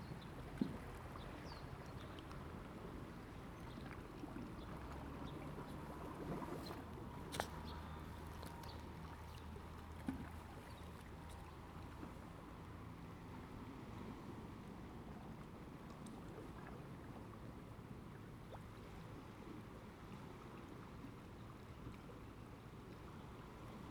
大福村, Hsiao Liouciou Island - Small pier
Waves and tides, Small pier
Zoom H2n MS+XY
2 November 2014, 09:09